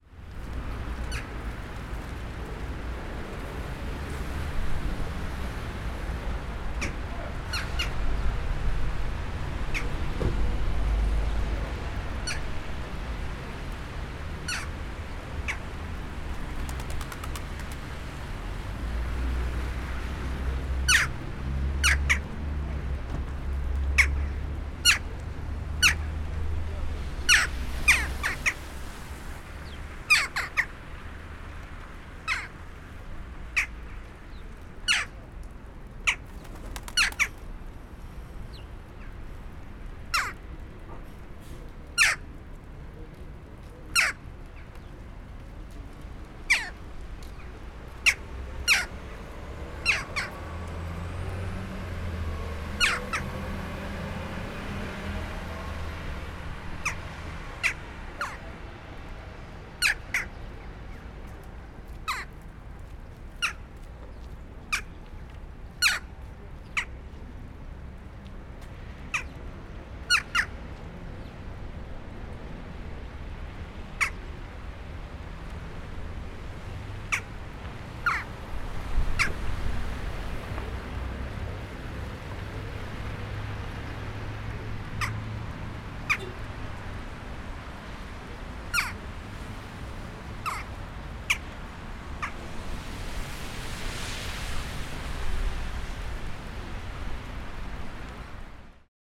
Vokzalnaya sq., Novgorod - voczalnayasq
Recorded near the entrance of Novgorod-Na-Volhove train station on Zoom H5.
Squeaky birds, road traffic, waterfall from a roof.
Novgorodskaya oblast', Russia, 24 January 2017, ~12:00